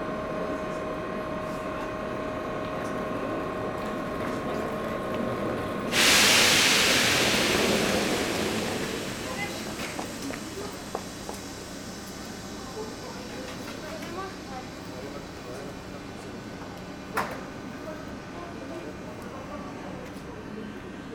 Amiens, France - Amiens station
While traveling, we were in the Amiens station on a Sunday morning. There’s a lot of people, discussing quietly. In first, the station hall, with a piano player. After, walking in the escalators of the two levels station and the path to the platform 7. At the end, the train passengers, the engine and finally the train to Paris leaving Amiens.
5 November 2017, ~11:00